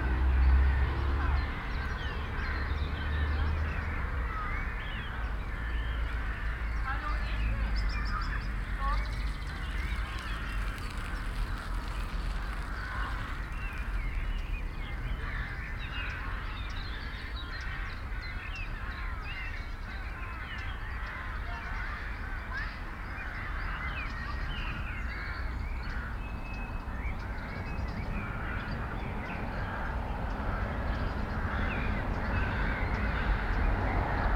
Kronshagen, Deutschland - Sunday late afternoon
Spring, Sunday, late afternoon in an urban residential district. Birds, traffic, a plane, some people and children. Binaural recording, Soundman OKM II Klassik microphone with A3-XLR adapter and windshield, Zoom F4 recorder.